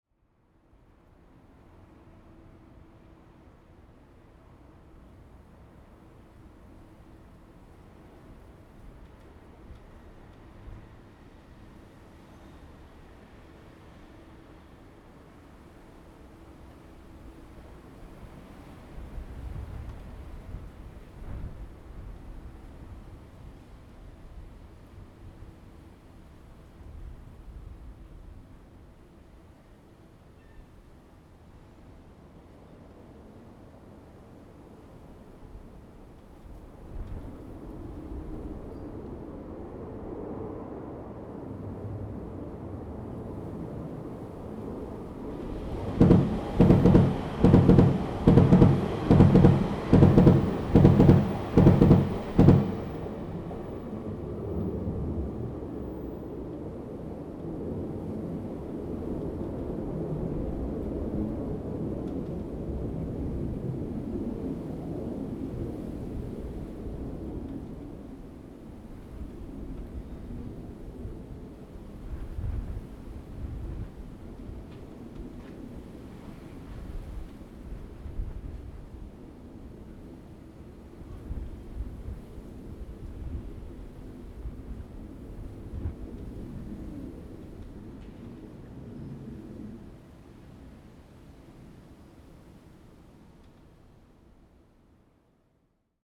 中華路四段567巷, Xiangshan Dist., Hsinchu City - near to the railroad tracks
wind, The train passes by, Next to the railroad tracks, The sound of the plane, Zoom H2n MS+XY
Xiangshan District, Hsinchu City, Taiwan, 30 August